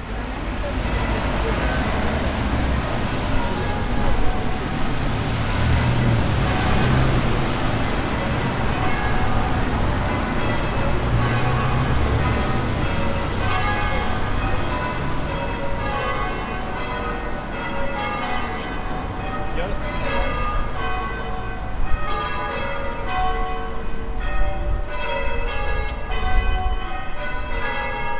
Dame Street, Dublin, Co. Dublin, Ireland - The Sunken Hum Broadcast 112 - Church Bells and City Traffic - 22 April 2013
Christchurch Cathedral in Dublin. Bells, voices, traffic, horsehoofs.